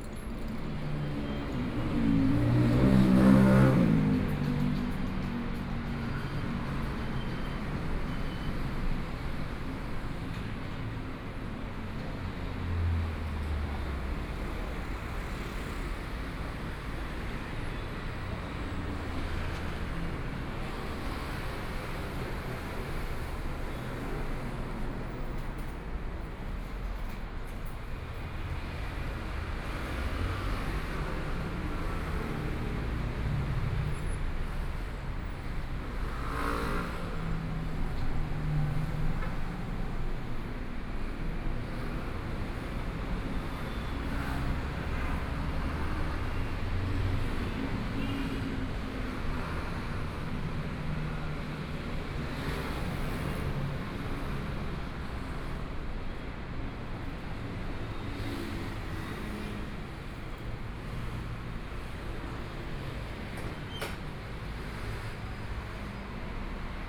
walking on the Road, Traffic Sound, Motorcycle Sound, Pedestrians, Binaural recordings, Zoom H4n+ Soundman OKM II
Taipei City, Taiwan, 10 February 2014, 15:10